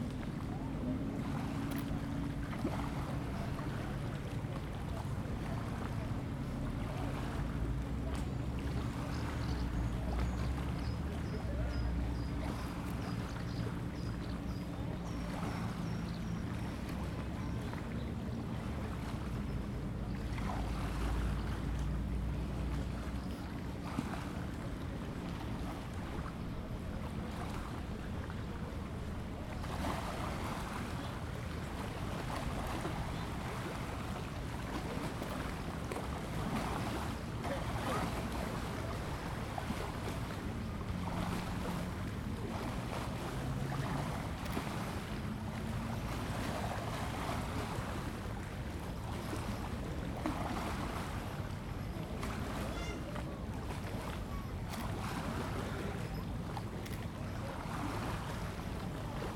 Aix-les-Bains, France - the lake
17 June, 19:15